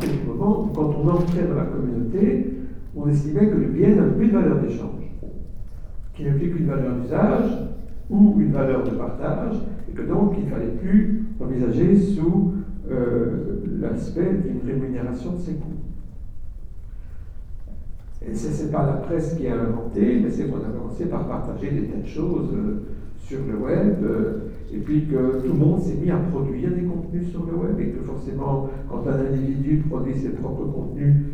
Centre, Ottignies-Louvain-la-Neuve, Belgique - A course of mass media
In the big Agora auditoire, a course about mass media.
Ottignies-Louvain-la-Neuve, Belgium, 11 March 2016, 11:20